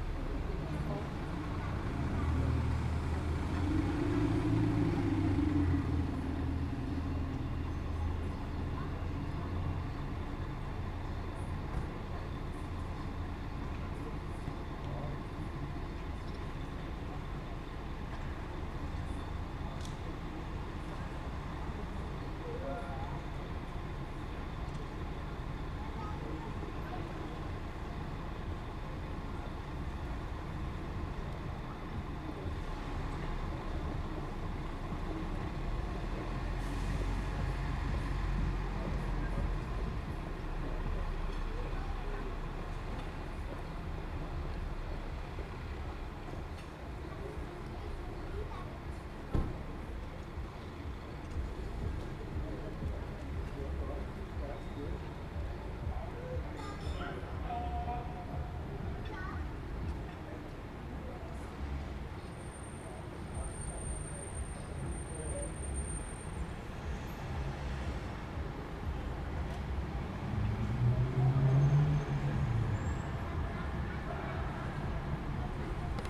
Arnulfspl., Regensburg, Deutschland - Mittagsstimmung am Arnulfsplatz
Stimmen, Strassengeräusche. Aufnahme aus dem Fenster eines Apartments im 4. Stock am Arnulfsplatz.
Regensburg, Germany, 31 May 2019, 16:22